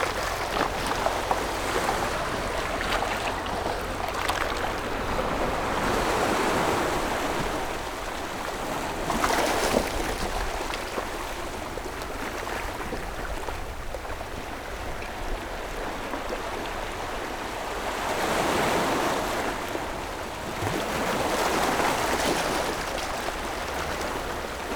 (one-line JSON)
{"title": "Nangan Township, Taiwan - Sound of the waves", "date": "2014-10-14 10:48:00", "description": "At the beach, Sound of the waves, A boat on the sea afar\nZoom H6+ Rode NT4", "latitude": "26.17", "longitude": "119.93", "altitude": "16", "timezone": "Asia/Taipei"}